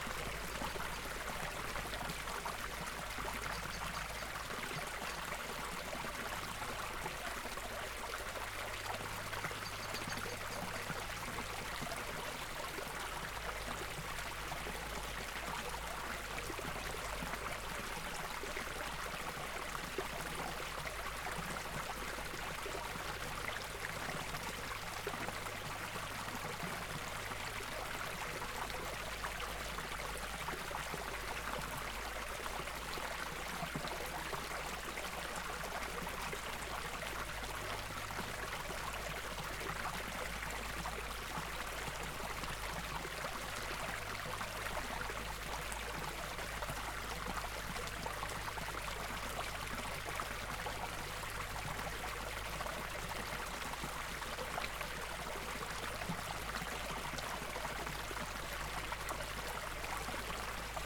This small stream is located in a ghyll - a small but deep wooded valley in East Sussex. A public footpath bisects the stream and is crossed by a small bridge. The recorder was placed close to two small drops in the sandstone stream bed. We haven't had much rain recently so the flow was fairly light. There were several Blackbirds 'chinking' nearby. Tascam DR-05 with wind muff.
Heathfield, UK, 28 December 2016